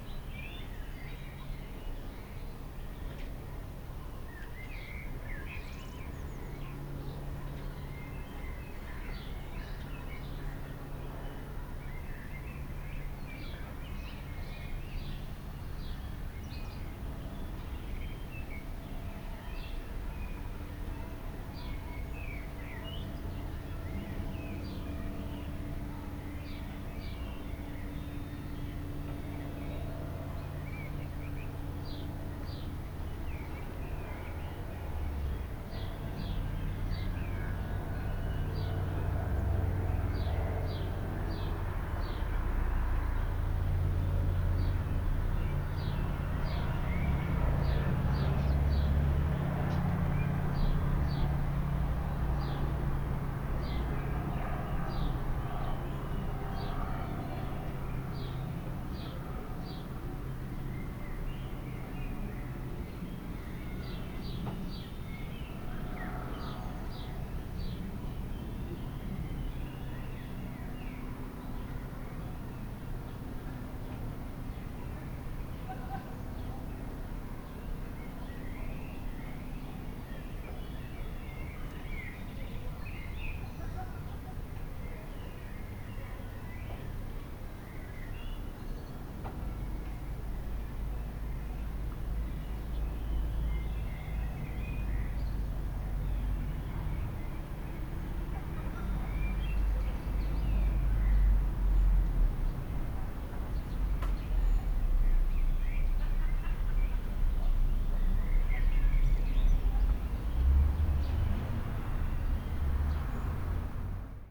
Klagenfurt am Wörthersee, Österreich - Backyard, Garden Sounds
saturday morning, cars, gardening noises, urban suburb, family home